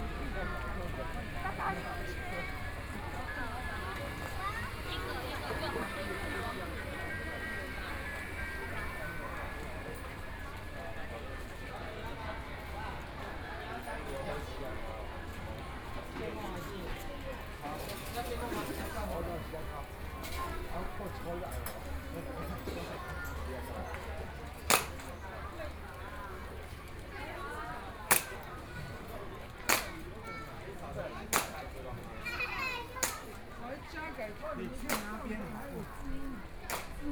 walking in the Night market, Snacks, Binaural recordings, Zoom H4n+ Soundman OKM II ( SoundMap2014016 -26)
Taitung County, Taiwan